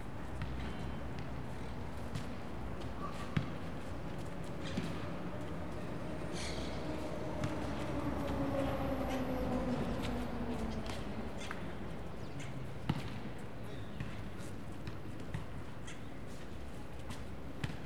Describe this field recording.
People playing basketball below me in the park on a Saturday morning, while the birds (parakeets and pigeons) behind me eat grains that somebody gave them. In the second half of the recording you can hear a man pushing a shopping trolley of empty bottles along the pavement to the recycling bank, then dropping them in. Recorded with ZOOM H4n.